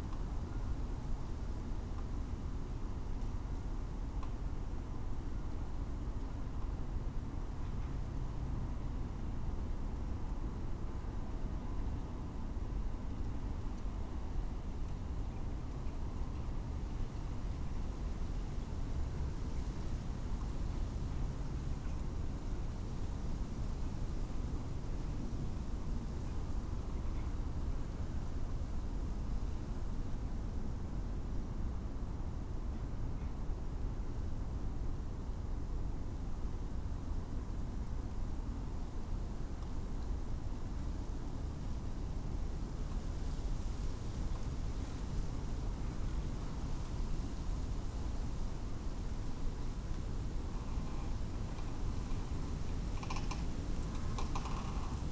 Vermont, Austin, TX - Snow
Snow Day
Recorded with Lom Usi and Sound Devices 633